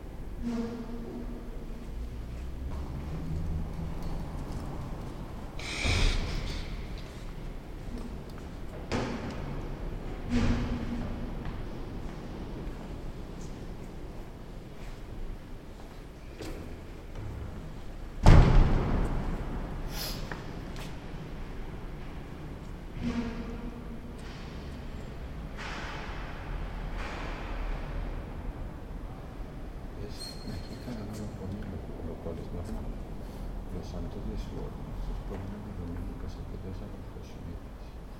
saint Jilji church in Staré město
soundscape from entrance and interiour of the church of saint Jilji in Husova street
Prague-Prague, Czech Republic